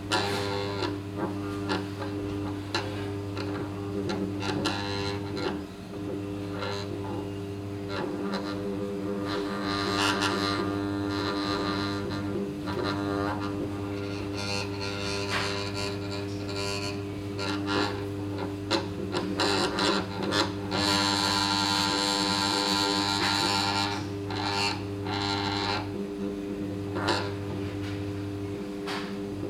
Lörick, Düsseldorf, Deutschland - Düsseldorf, Wevelinghoferstr, kybernetic op art objects
The sound of kybernetic op art objects of the private collection of Lutz Dresen. Here no.05 a metal needle on a string attached to a wooden board with an electro magnetic motor inside.
soundmap nrw - topographic field recordings, social ambiences and art places